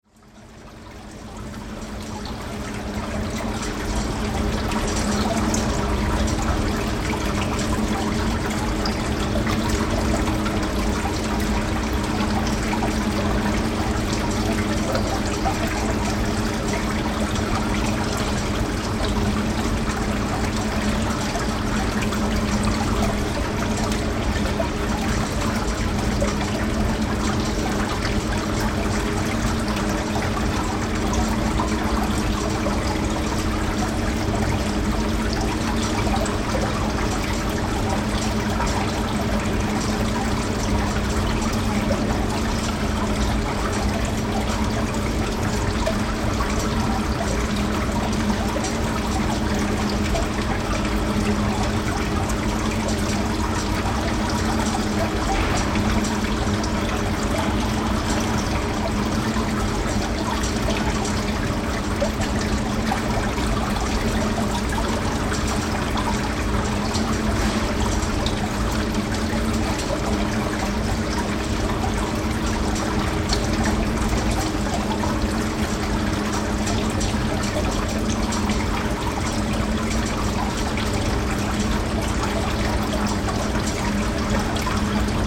berlin, hermannplatz: warenhaus, springbrunnen - the city, the country & me: fountain & moving staircase at karstadt department store
the city, the country & me: may 28, 2008